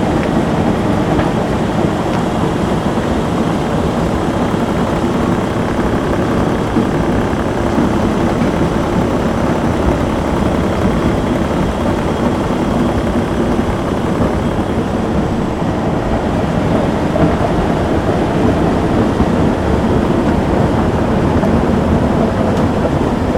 Málkov, Czech Republic - Nástup mine - Excavator machinery powering the bucket wheel
The sound of the impressive machinery that rotates and sweeps the wheel as it cuts it to coal seam.
23 August